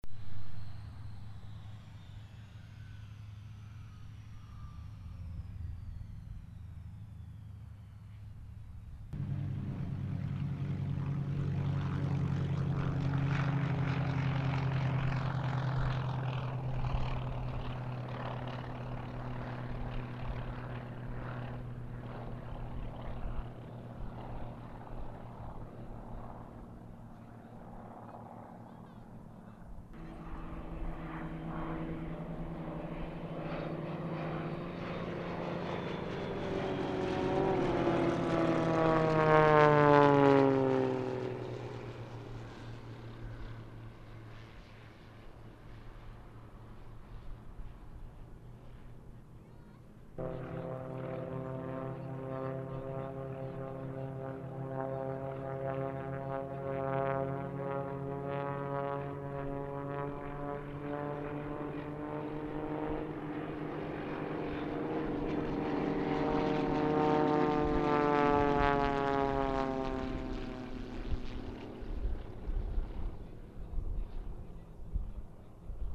Grobnik, aerodrom, aero show
Aero-show @ Grobnik field.
Pilatus plane in air.